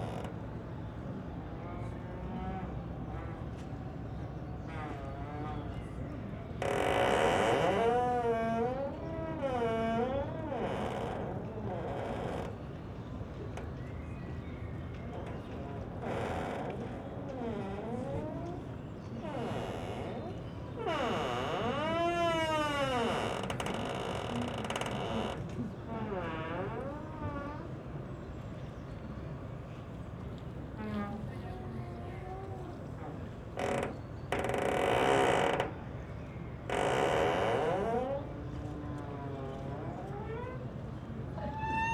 Plänterwald, Berlin, Spree - squeeking landing stage
a little landing stage made a nice squeeking sound
(tech note: SD702, AudioTechnica BP4025)